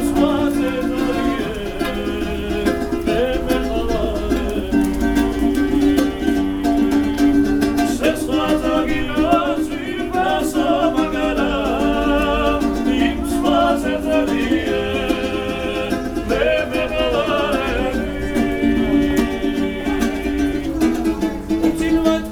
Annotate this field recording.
During a walk through Tbilisi, Georgia, we encounter a pair of young men singing for money in an underground passage.